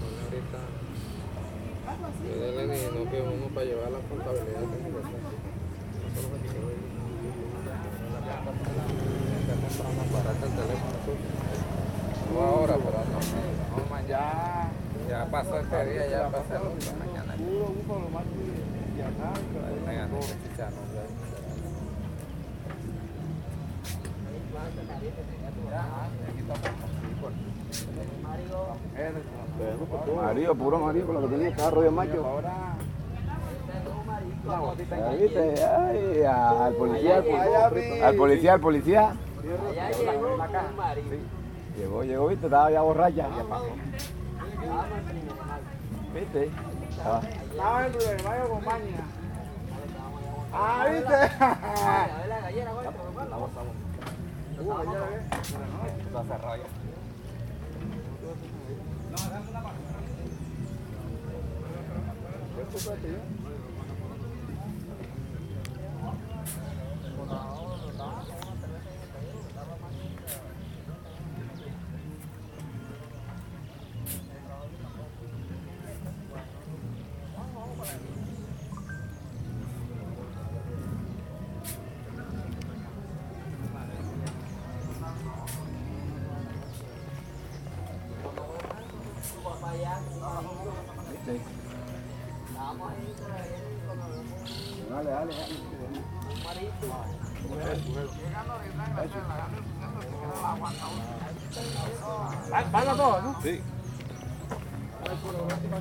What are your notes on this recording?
Un grupo de areneros llena un furgón con arena sacada del río Magdalena, mientras conversan. En el río se ven otras barcas sacando arena del fondo del río.